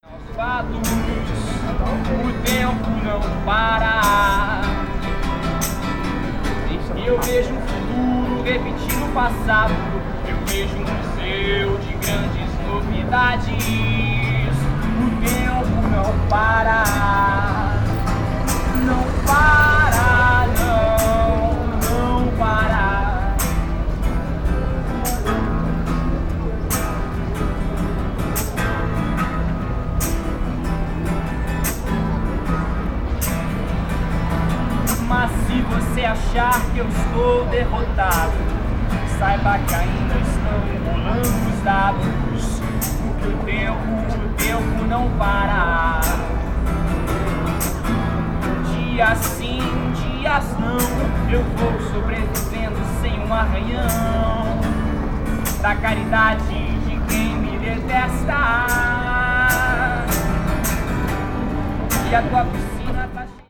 Musico Cazuza - Centro, Londrina - PR, Brasil - Calçadão: músico de rua (Cazuza)
Panorama sonoro gravado no Calçadão de Londrina, Paraná.
Categoria de som predominante: antropofonia (músicos de rua, veículos e vozes).
Condições do tempo: ensolarado, vento, frio.
Data: 23/05/2016.
Hora de início: 14h48.
Equipamento: Tascam DR-05.
Classificação dos sons
Antropofonia:
Sons Humanos: Sons da Voz; Canto.
Sons da Sociedade: Músicas; Instrumentos Musicais; Músico de Rua.
Sons Mecânicos: Máquina de Combustão Interna; Automóveis.
Sound panorama recorded on the Boardwalk of Londrina, Paraná.
Predominant sound category: antropophony (street musicians, vehicles and voices).
Weather conditions: sunny, wind, cold.
Date: 05/23/2016.
Start time: 14h48.
Hardware: Tascam DR-05.
Human Sounds: Sounds of the Voice; Corner.
Sounds of Society: Music; Musical instruments; Street musician.